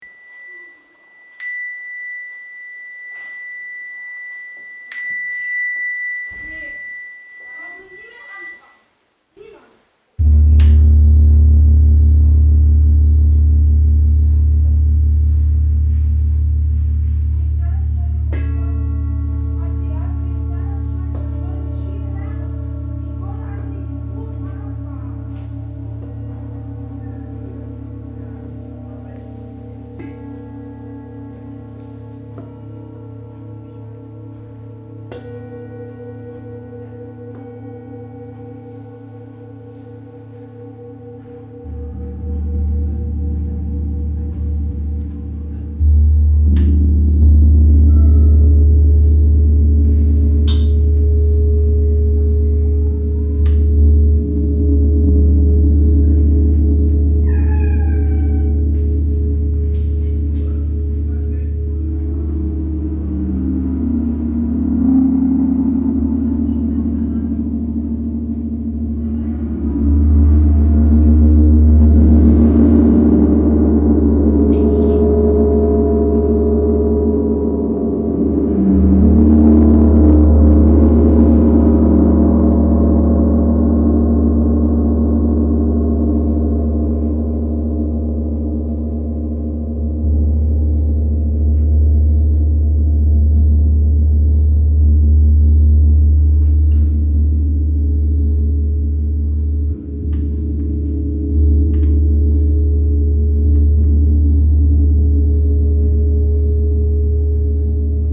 Borbeck - Mitte, Essen, Deutschland - essen, traugott weise school, sound room
In der Traugott Weise Schule einer Förderschule mit dem Schwerpunkt geistige Entwicklung - im Klangraum. Der Klang der Gongs und Klangschalen.
Inside the Traugott Weise school at the sound room. The sound of the gongs, chimes and sound bowls.
Projekt - Stadtklang//: Hörorte - topographic field recordings and social ambiences
Essen, Germany, 14 May 2014, ~13:00